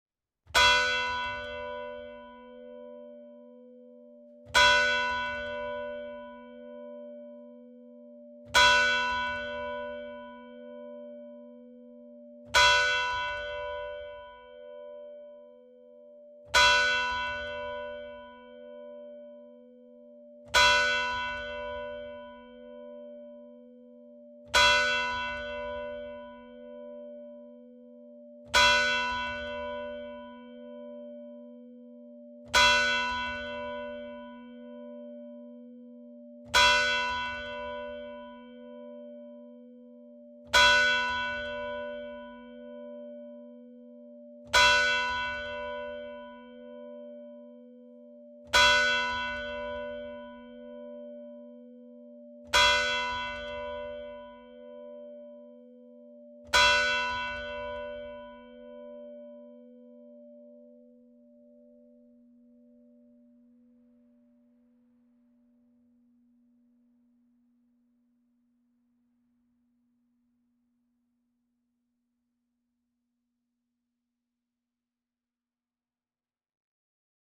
Le Bourg, Longny les Villages, France - Maletable - Église Notre Dame de la Salette
Maletable (Orne)
Église Notre Dame de la Salette
Tintements